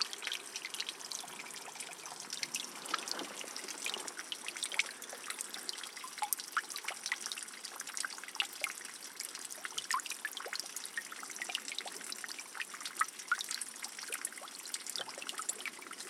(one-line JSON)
{"title": "1/the weeps rock - sile little creek", "date": "2009-12-01 12:50:00", "latitude": "41.17", "longitude": "29.63", "altitude": "6", "timezone": "Europe/Istanbul"}